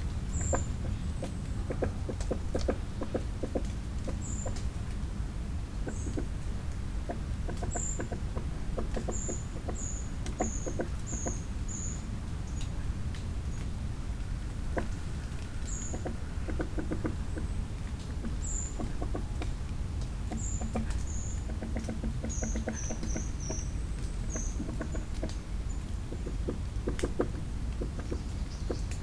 {"title": "Kloster Insel, Rheinau, Schweiz - fogdrops Rheinau O+A", "date": "2012-10-20 11:09:00", "description": "Bruce Odland and I (O+A)\nresearched the auditory qualities around Rheinau over more than a year. The resulting material served as the starting point and source material for our Rheinau Hearing View project and became part of the Rheinau Hearing View library.", "latitude": "47.64", "longitude": "8.61", "altitude": "356", "timezone": "Europe/Berlin"}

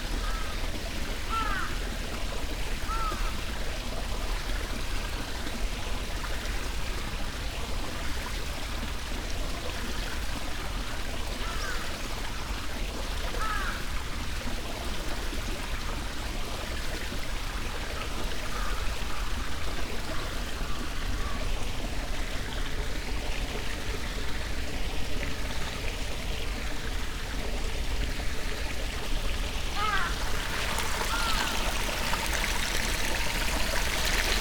{"title": "rikugien gardens, tokyo - poem of a miniature waterfall, microphones and black crows", "date": "2013-11-12 14:23:00", "latitude": "35.73", "longitude": "139.75", "altitude": "28", "timezone": "Asia/Tokyo"}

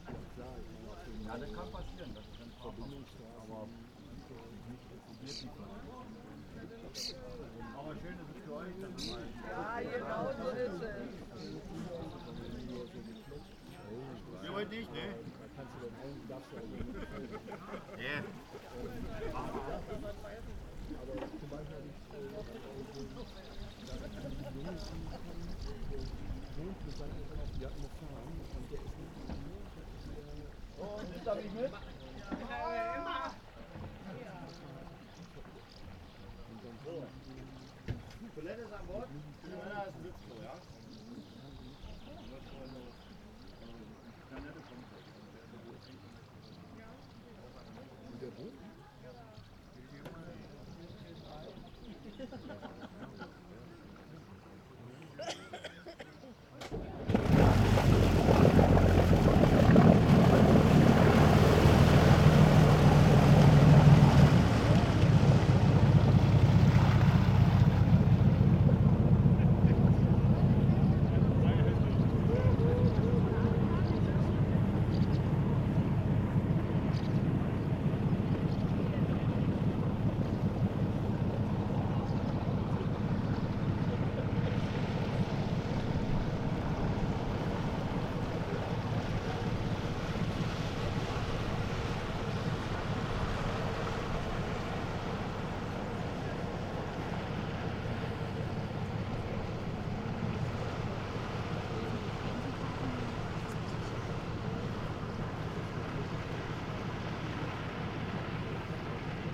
{"title": "Groß Neuendorf, Oder, Anleger / quay", "date": "2010-05-23 13:35:00", "description": "little tourist ferry arrives, man invites people for a 1h trip, 5 euro per person, dogs free.", "latitude": "52.70", "longitude": "14.41", "altitude": "9", "timezone": "Europe/Berlin"}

